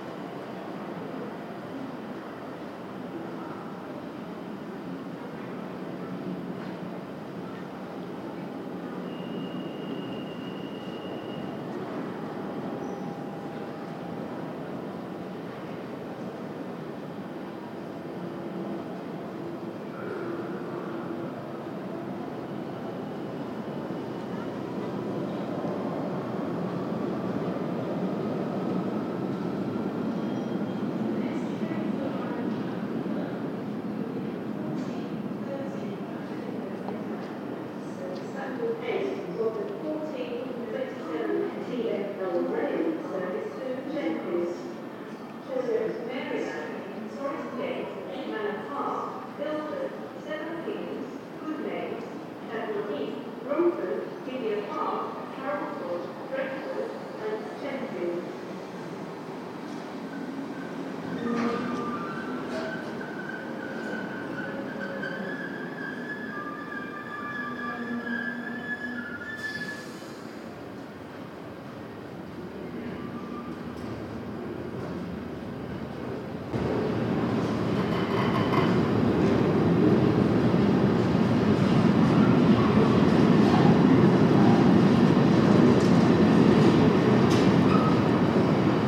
London, Stratford UK - Stratford, London Train Station - National Rail
Shotgun mic recording in Stratford Station, Freight Trains and Passenger Trains passing through the station. Very cold day.